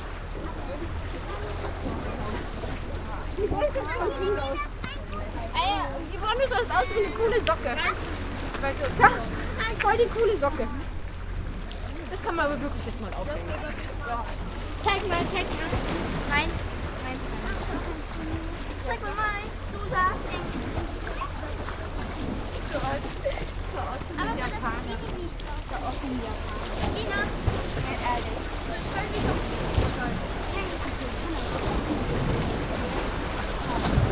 german speaking young ladies - try to catch photo with RA